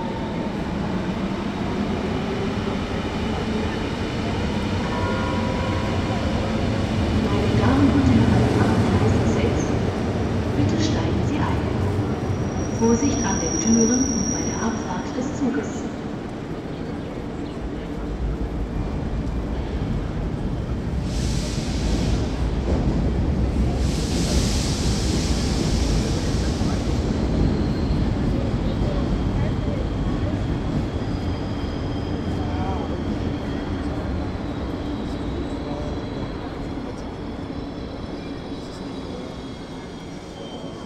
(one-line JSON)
{"title": "Berlin Ostbahnhof, platform - Berlin Ostbahnhof, survey point, platform 6", "date": "2008-07-20 14:30:00", "description": "sunday, 20.07.2008, 2:30pm\nstation ambience, microfon on a survey point right on the platform.", "latitude": "52.51", "longitude": "13.44", "altitude": "44", "timezone": "Europe/Berlin"}